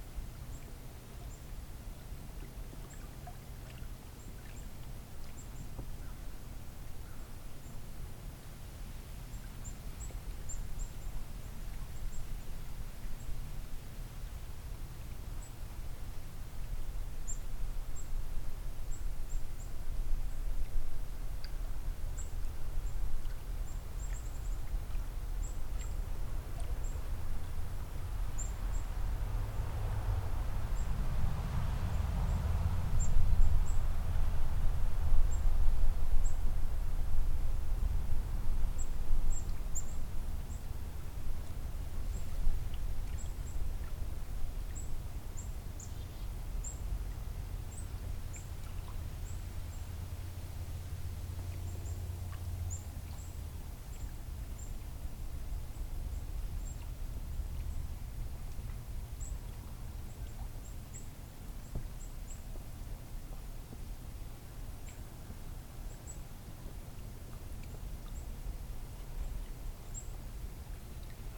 Three Pines Rd., Bear Lake, MI, USA - First Snow of Winter 2015-16
A very still Saturday afternoon. The season's first snow is lightly falling. Vehicle passes on the road beyond the house. Gentle water sounds against the north shore of Bear Lake. As heard from the top of steps leading down to water's edge. Stereo mic (Audio-Technica, AT-822), recorded via Sony MD (MZ-NF810).